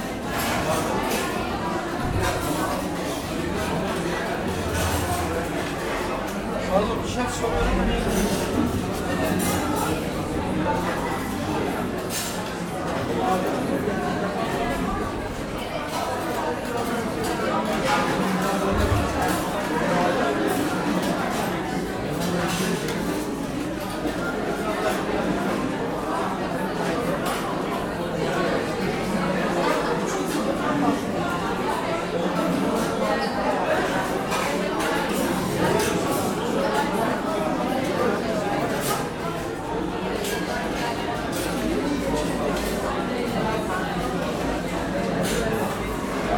ITÜ Architechture bldg survey, Cafeteria
sonic survey of 18 spaces in the Istanbul Technical University Architecture Faculty
6 March 2010